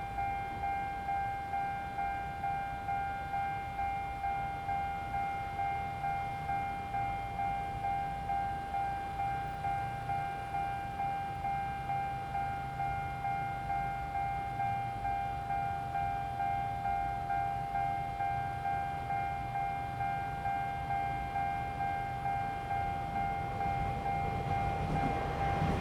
Xinxing Rd., Xinfeng Township - In the railway level road
In the railway level road, Traffic sound, Train traveling through
Zoom H2n MS+XY